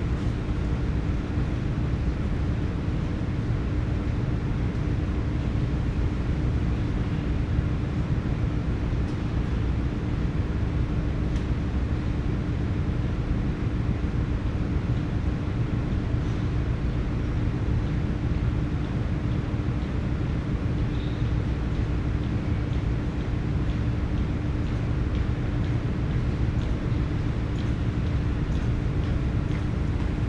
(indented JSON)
{
  "title": "Calgary +15 Canterra bridge 2",
  "description": "sound of the bridge on the +15 walkway Calgary",
  "latitude": "51.05",
  "longitude": "-114.07",
  "altitude": "1051",
  "timezone": "Europe/Tallinn"
}